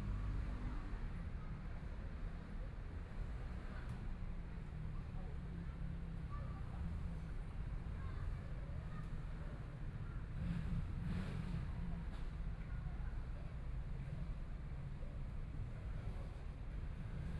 Sitting in the park, In children's play area, Environmental sounds, Motorcycle sound, Traffic Sound, Binaural recordings, Zoom H4n+ Soundman OKM II